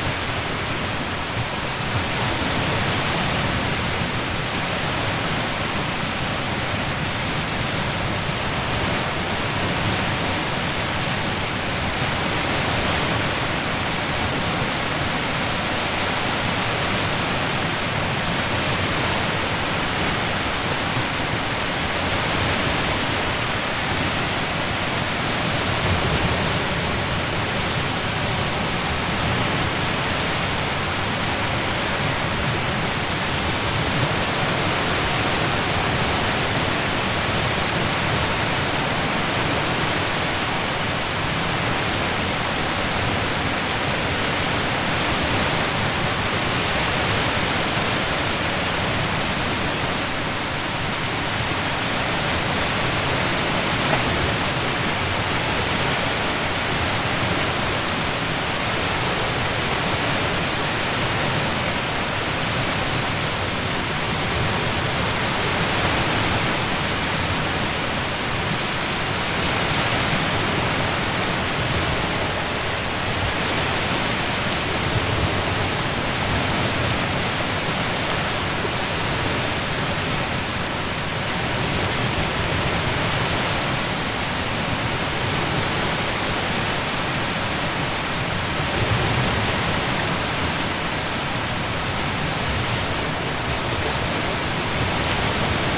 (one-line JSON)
{"title": "Kukuliškiai, Lithuania - Seashore from afar", "date": "2021-07-28 20:18:00", "description": "Baltic sea shore, recorded from the top of a derelict coastal defence battery. Recorded with ZOOM H5 and Rode NTG3b.", "latitude": "55.78", "longitude": "21.07", "altitude": "2", "timezone": "Europe/Vilnius"}